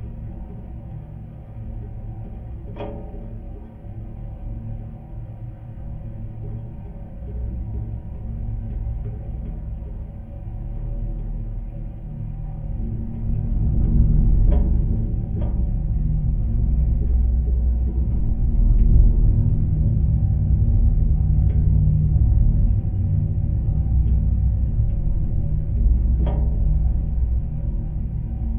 Joneliškės, Lithuania, swaying sign
LOM geophone on a metallic sign swaying in a wind. low frequencies
Utenos apskritis, Lietuva, 1 May 2020, 14:50